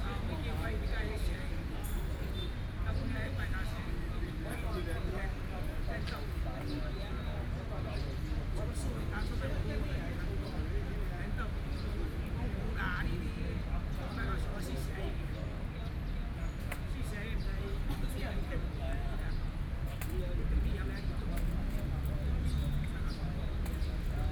Wenchang Park, Taoyuan County - Hot noon
Chat with a group of elderly people under the big tree, Sony PCM D50 + Soundman OKM II
Taoyuan County, Taiwan